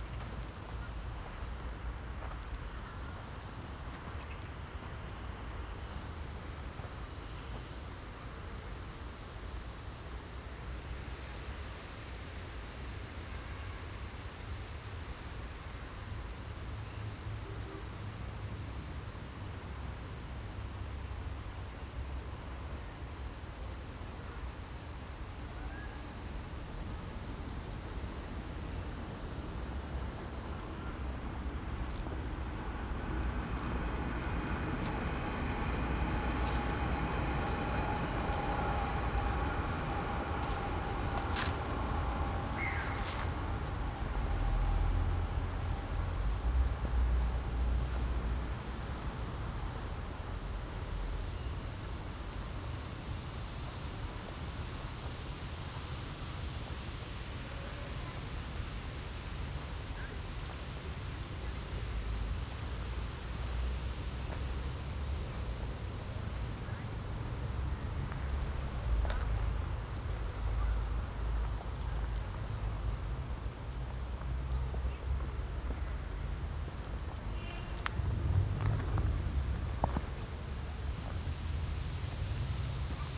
{"title": "sanctuary for lizards, Alt-Treptow, Berlin - walk in wasteland", "date": "2013-07-11 20:05:00", "description": "the prolongation of the old train embarkment is now fenced and declard as a sanctuary for lizards. walk through the area, summer evening, no lizards around.\n(Sony PCM D50, DPA4060)", "latitude": "52.49", "longitude": "13.46", "altitude": "35", "timezone": "Europe/Berlin"}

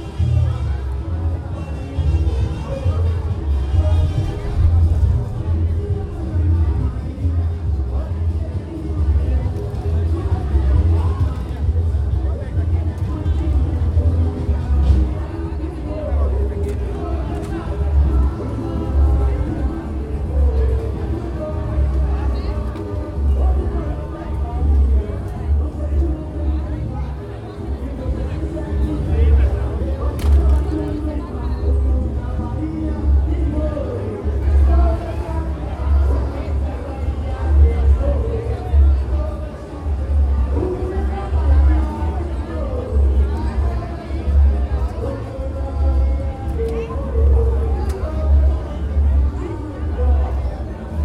{"title": "Maragogipe, BA, Brasil - Carnaval de Maragujipe 2014", "date": "2014-03-02 15:23:00", "description": "Audio capturado na Praço Antonio Rebolsas em Maragujipe - BA, no dia 02 de Março de 2014.", "latitude": "-12.77", "longitude": "-38.91", "timezone": "America/Bahia"}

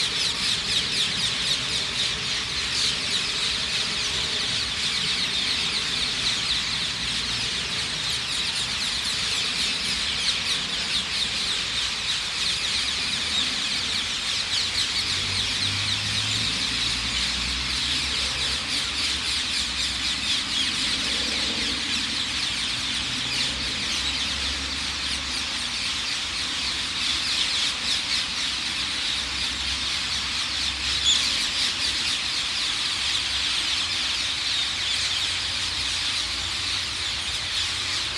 {"title": "Garden of Remembrance, London Borough of Lewisham, London, UK - Intense Parakeet Roost: Hither Green Cemetery", "date": "2013-08-14 20:30:00", "description": "Thousands of Ring-necked Parakeets gather to roost in this line of poplar trees in Hither Green Cemetery. The sound is reminiscent of Hitchcock's 'The Birds'. They begin to fly in about an hour before sunset and keep up this intense conversation until they gradually quieten as night falls.", "latitude": "51.44", "longitude": "0.01", "altitude": "40", "timezone": "Europe/London"}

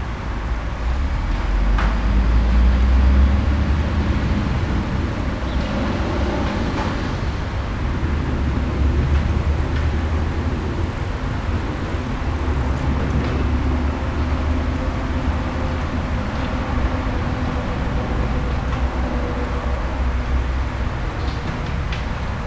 {"title": "Hasenheide ambience", "date": "2010-09-22 17:15:00", "description": "ambience recording in Hasenhiede Berlin", "latitude": "52.48", "longitude": "13.42", "altitude": "50", "timezone": "Europe/Berlin"}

